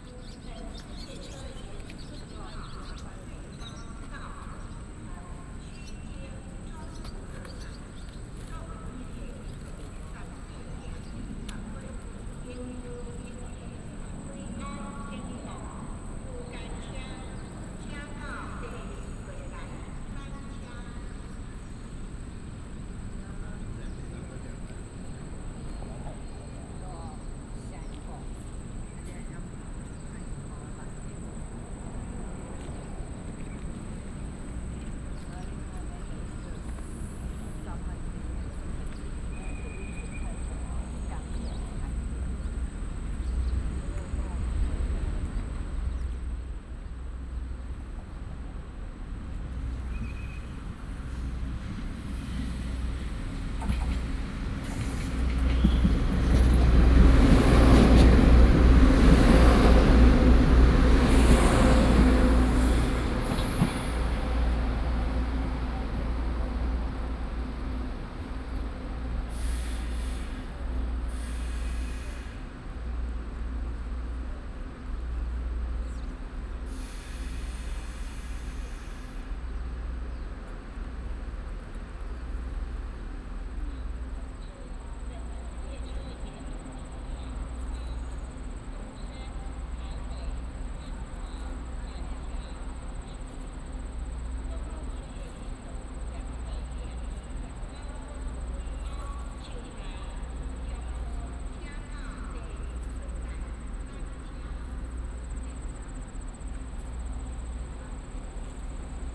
Ruifang Station, 瑞芳區, New Taipei City - In the train station platform
In the train station platform, Traveling by train, Train arrival platform
Sony PCM D50+ Soundman OKM II